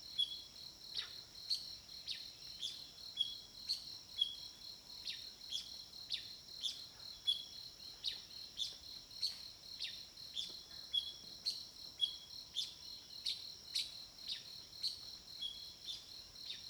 Zhonggua Rd., Puli Township 南投縣 - Insects and Bird sounds
Insects sounds, Bird sounds
Zoom H2n MS+ XY